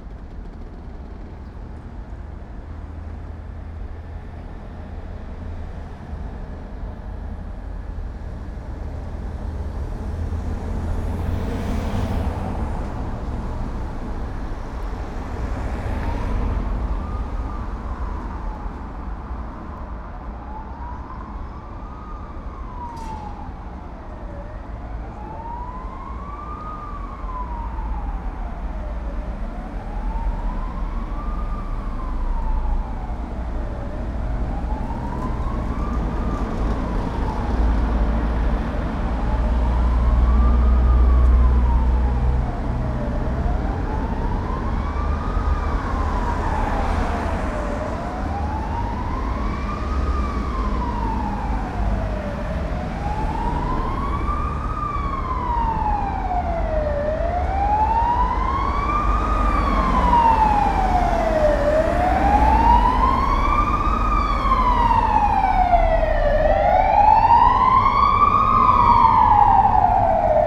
Brussels, Avenue Brugmann, Ambulance and tram 92 afterwards.